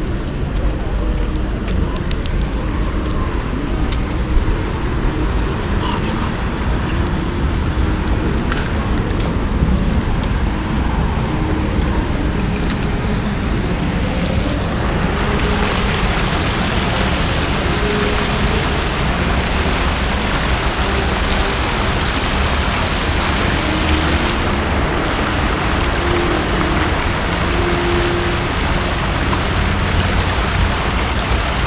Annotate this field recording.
Dźwięki nagrano podczas pikniku zrealizowanego przez Instytut Kultury Miejskiej. Nagrania dokonano z wykorzystaniem mikrofonów kontaktowych.